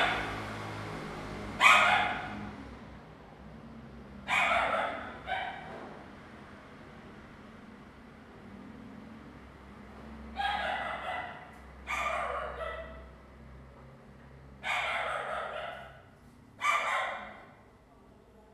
Inside the apartment, staircase, Dog barking, Traffic sound
Zoom H2n MS+XY